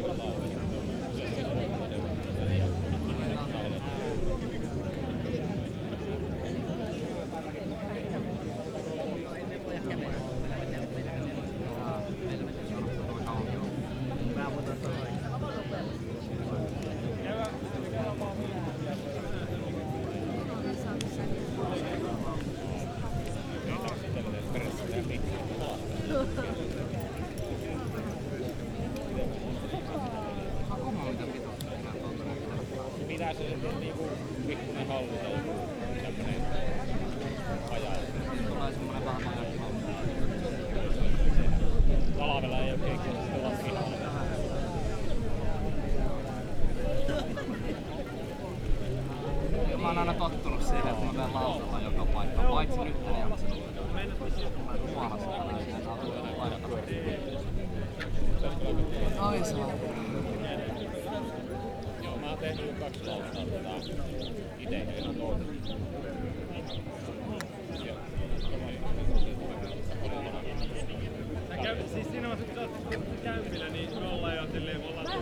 Kiikeli, Oulu, Finland - Friday evening at Kiikeli
The island 'Kiikeli' is really popular among younger folk during warm summer evenings. This time the island was full of young people spending time with their friends. Zoom H5, default X/Y module.